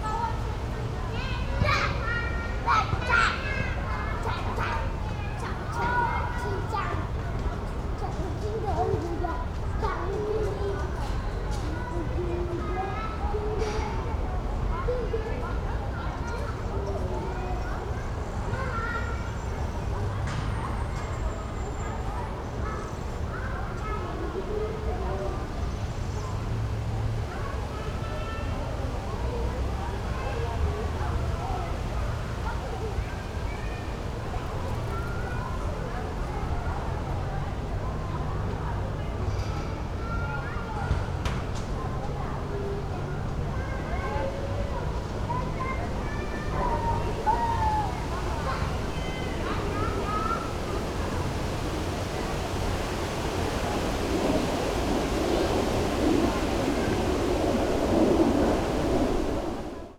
playground ambience, berlin, weinbergspark
Berlin, Germany, 18 July 2011